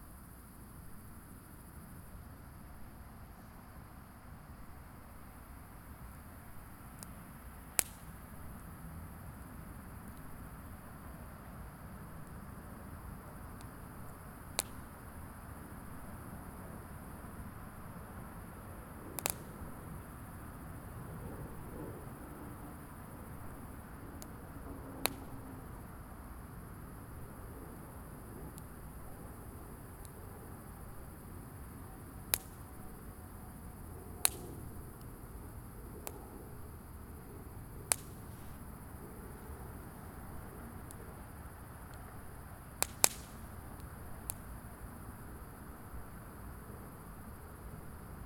Crackles from a very small fire that I found abandoned and revived for a little bit. Also traffic sound from a nearby bridge and a passing airliner.